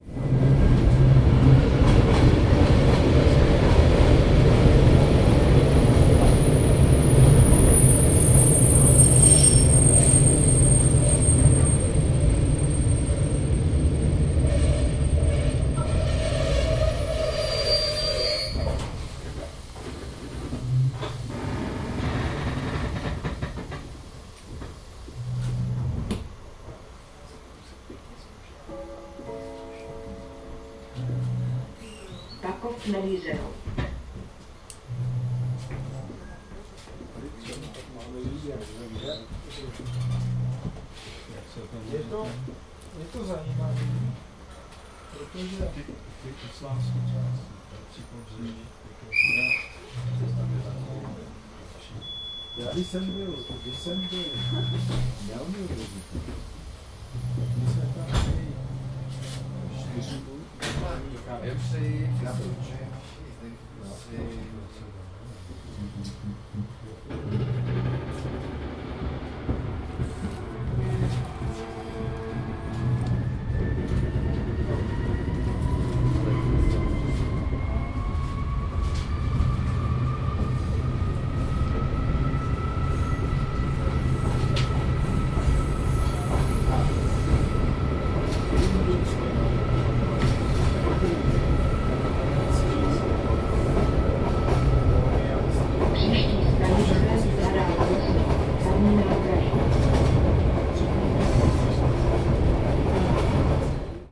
Bakov nad Jizerou train station recorded from a train.
Czech Republic